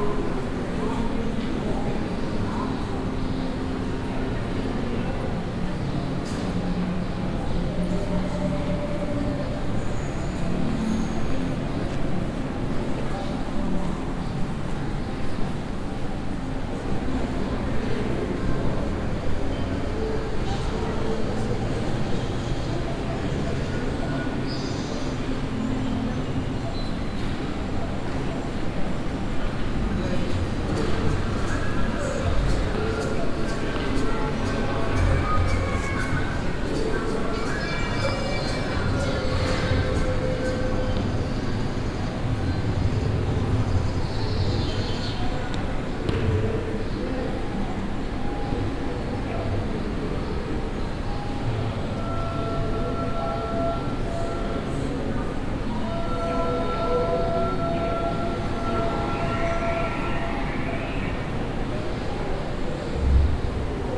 inside the media exhibition imagining media @ zkm of the center for art and media technology in karlsruhe - sounds of different mostly interactive media installations
soundmap d - topographic field recordings and social ambiences
4 June 2010, ~11:00